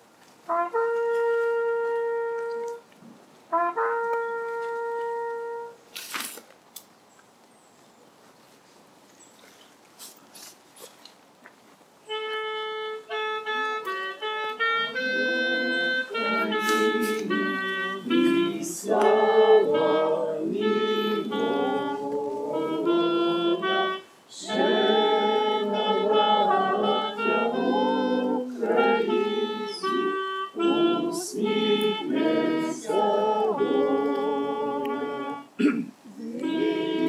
Avenue de la Libération, Peynier, France - commémoration aux volontaires Ukrainiens en 40
Exilés politiques ou réfugiés économiques, quelques milliers de volontaires Ukrainiens s'engagent dans la légion étrangère et se retrouvent à Peynier près de Marseille en 1940.
La municipalité de Peynier, la légion étrangère, l'association des descendants des volontaires Ukrainiens de la légion étrangère se retrouvent chaque année le 2 novembre pour en rappeler le souvenir.
Political exiles or economic refugees, a few thousand Ukrainian volunteers join the foreign legion and meet at Peynier near Marseille in 1940.
The municipality of Peynier, the foreign legion, the association of the descendants of Ukrainian volunteers of the foreign legion meet every year on November 2 to remember the memory.